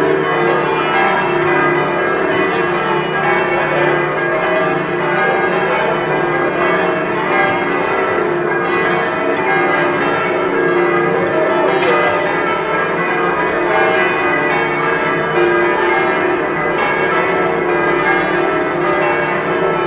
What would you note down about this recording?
Festtagsglocken mal zwei die sich konkurrenzieren auf der Piazza zwischen der Feier und dem Beginn des Nationalfeiertages